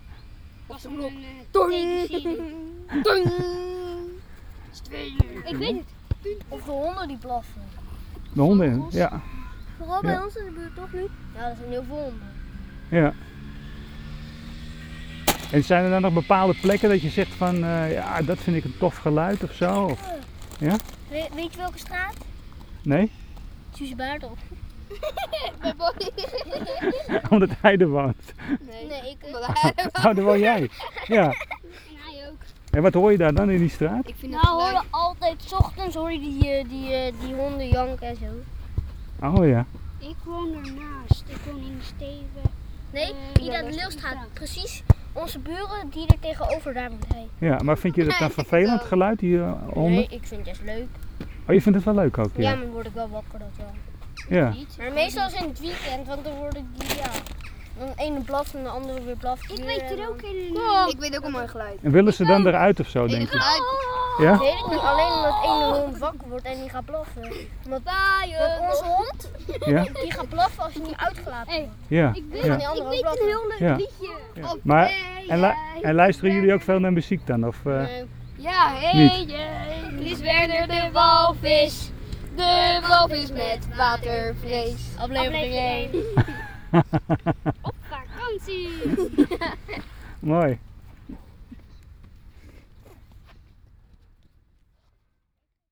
geprek met kinderen over geluiden van de molen en in de Stevenshof en muziek....
talking with children on the soccerfield about sounds of the Stevenshof